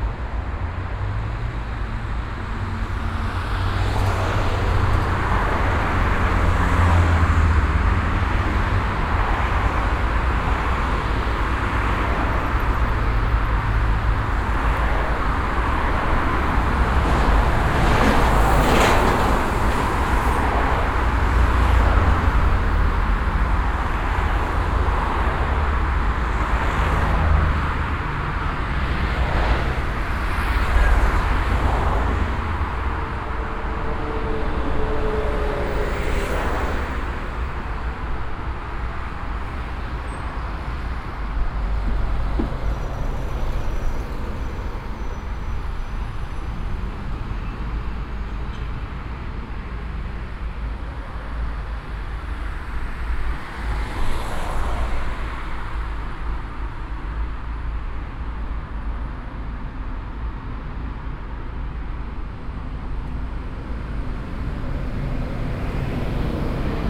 essen, berne street, traffic
At the Berne street on a small green island - Traffic passing by from both directions.
Projekt - Klangpromenade Essen - topographic field recordings and social ambiences
Essen, Germany, 8 June 2011, ~22:00